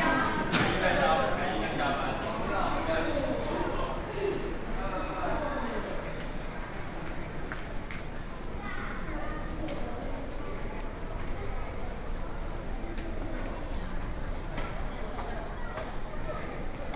Banqiao Station, New Taipei City - At the station mall
New Taipei City, Taiwan, 10 November 2012, 15:16